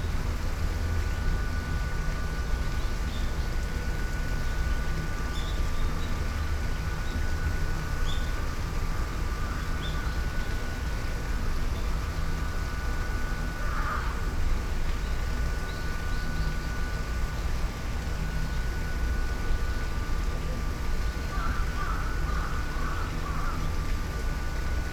water fall, Shoseien, Kyoto - dark green lights, subtle maple leaves ornaments above
gardens sonority
birds, crow, traffic noise